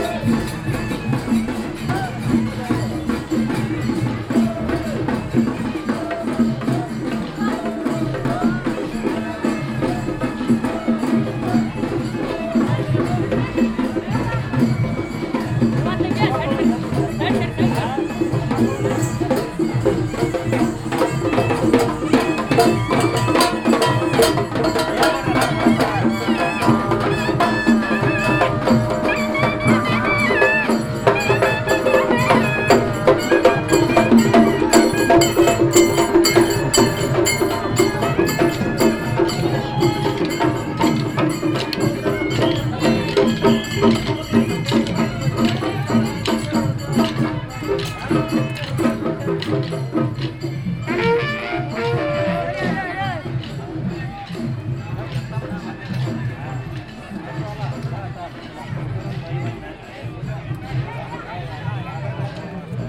Saundatti, Saundatti Road, Celebration of Kannada language
India, Karnataka, Saundatti, march, Kannada, fanfare, Kannada is one of the twenty-two official languages of India and is the sole administrative language of the State of Karnataka. It is also one of the four classical languages of India.
Saundatti, Karnataka, India, 23 February